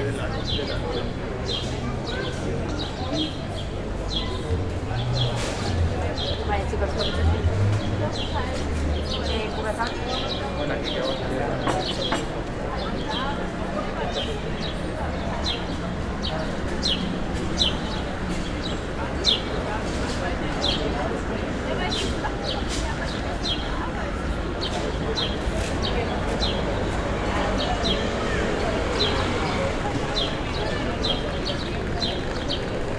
Easo Plaza
Captation : ZOOM H6
Gipuzkoa, Euskadi, España